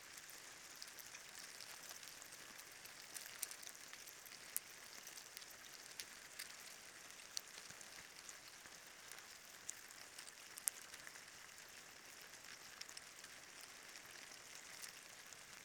{"title": "Lithuania, Utena, awakened ants", "date": "2013-05-01 14:55:00", "description": "ants on the fallen tree on the old jew's grave", "latitude": "55.49", "longitude": "25.57", "altitude": "121", "timezone": "Europe/Vilnius"}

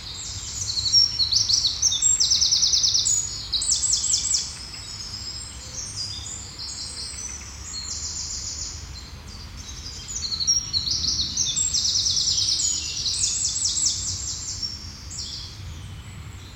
{"title": "Mont-Saint-Guibert, Belgique - In the woods", "date": "2017-05-20 09:30:00", "description": "Recording of the birds during springtime, in the woods of Mont-St-Guibert. There's a lot of wind in the trees.", "latitude": "50.64", "longitude": "4.62", "altitude": "134", "timezone": "Europe/Brussels"}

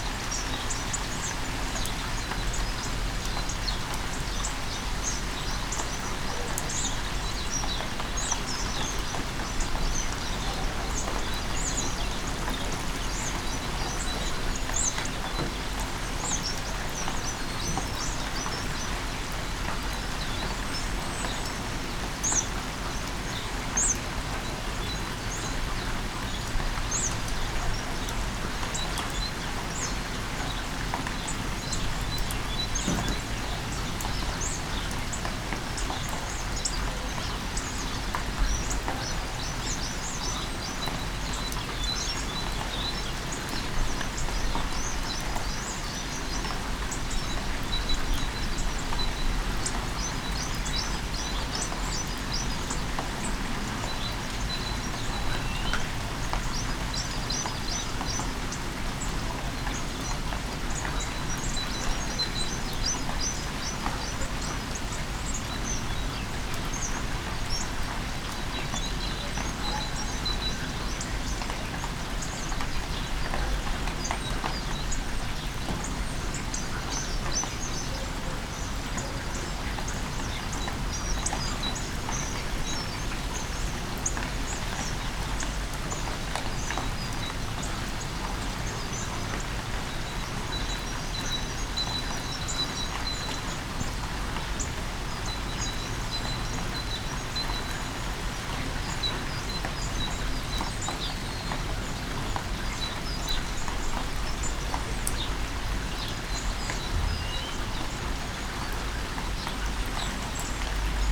{"title": "Opatje selo, Miren, Slovenija - Rainy day in Opatje selo", "date": "2020-03-01 11:35:00", "description": "Rainy day, bird's singing, the church bell strikes twice for the announcement of half an hour.\nRecorded with ZOOM H5 and LOM Uši Pro, AB Stereo Mic Technique, 40cm apart.", "latitude": "45.85", "longitude": "13.58", "altitude": "172", "timezone": "Europe/Ljubljana"}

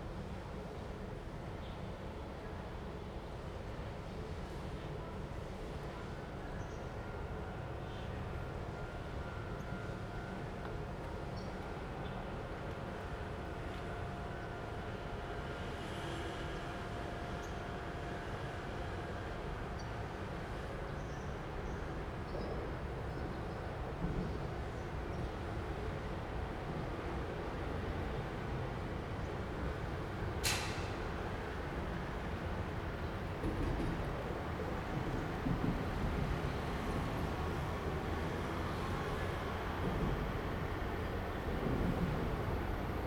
{"title": "Jianguo S. Rd., Changhua City - Traffic sound", "date": "2017-02-15 14:21:00", "description": "Next to the railway, The train runs through, Traffic sound\nZoom H2n MS+XY", "latitude": "24.09", "longitude": "120.55", "altitude": "24", "timezone": "GMT+1"}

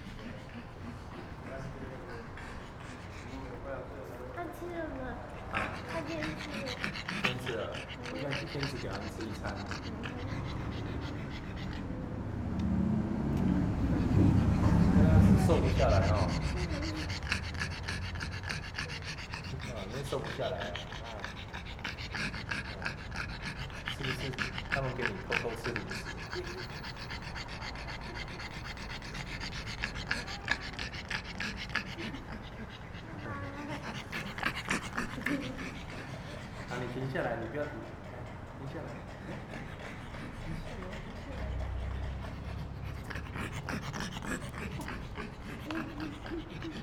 {"title": "tamtamART.Taipei - dog", "date": "2013-08-04 18:48:00", "description": "The same dog appeared in the gallery, Sony PCM D50", "latitude": "25.05", "longitude": "121.52", "altitude": "24", "timezone": "Asia/Taipei"}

